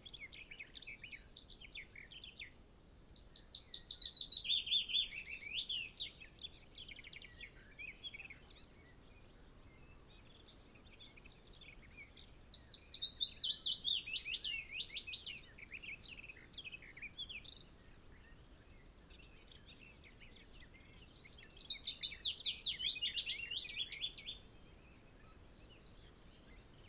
Willow warbler ... garden warbler ... soundscape ... bird song and calls ... yellowhammer ... skylark ... pheasant ... corn bunting ... binaural dummy head ... sunny ... very breezy early morning ...
Malton, UK, 18 May 2011, 05:30